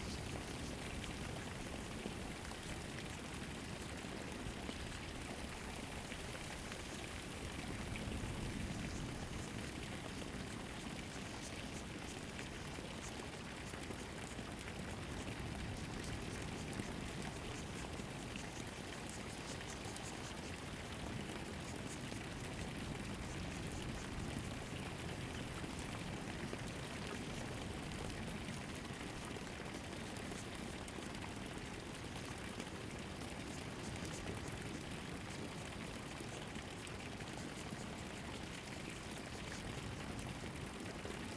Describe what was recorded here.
In the farmland, The sound of water, Very hot weather, Zoom H2n MS+ XY